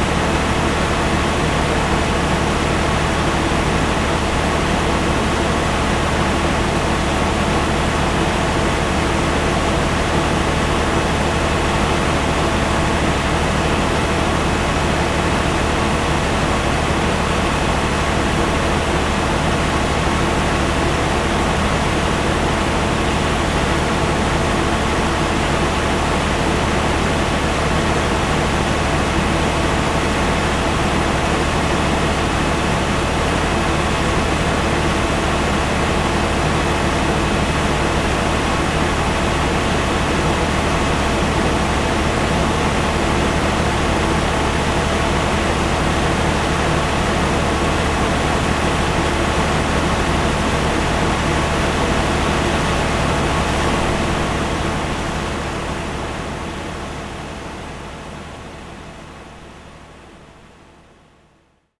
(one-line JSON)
{"title": "Vytauto pr., Kaunas, Lithuania - Large noisy device", "date": "2021-05-06 09:08:00", "description": "A close-up recording of some kind of large air pump (or maybe generator, or other kind) device. Recorded with ZOOM H5.", "latitude": "54.89", "longitude": "23.93", "altitude": "30", "timezone": "Europe/Vilnius"}